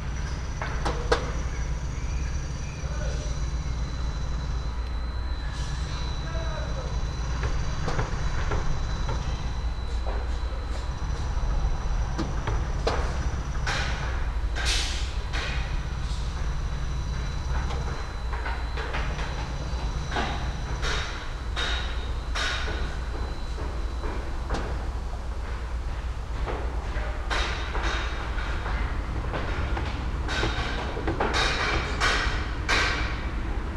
Elgar Road, Reading, UK - Construction site building sounds
The continuing progress of 112 new homes being built across the river from where i live. Sony M10 with custom made boundary device using a pair of Primo omni mics.
July 2018